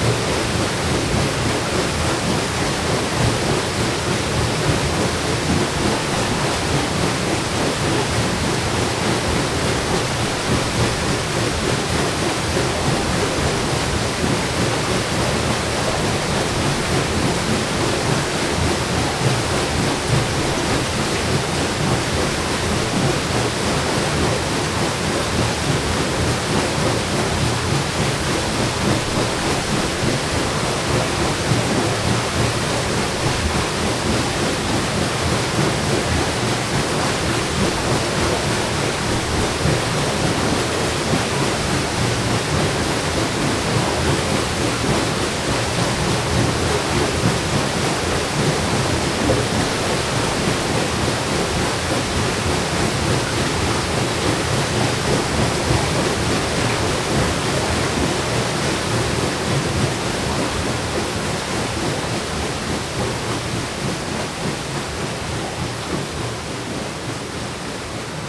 enscherange, rackesmillen, mill wheel
At the mill wheel. The sound as the stowed water floats into the mills wheel room and starts to move the wheel.
Enscherange, Rackesmillen, Mühlenrad
Am Mühlrad. Die Gräusche des gestauten Wassers wie es in die Mühle fliesst und das Mühlrad beginnt anzutreiben.